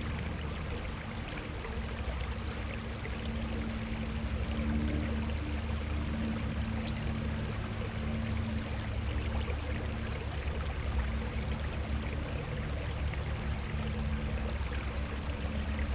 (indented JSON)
{
  "title": "water drain runoff, Austin TX",
  "date": "2010-03-25 06:53:00",
  "description": "small water drainage from a runoff channel",
  "latitude": "30.50",
  "longitude": "-97.78",
  "altitude": "249",
  "timezone": "Europe/Tallinn"
}